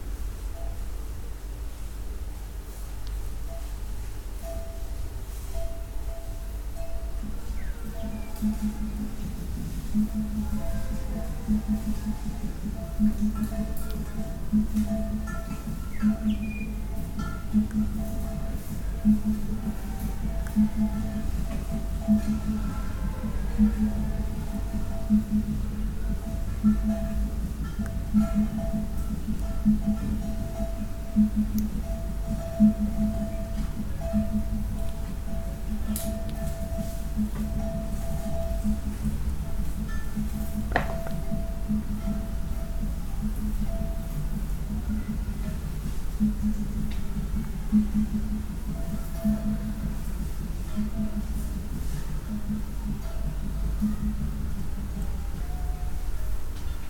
…we are at Tusimpe Cathalic Mission in Binga… sounds from a herd of cows wandering through the dry bush… occasional singing and drumming from the church…
Binga, Zimbabwe, 7 November 2012, ~17:00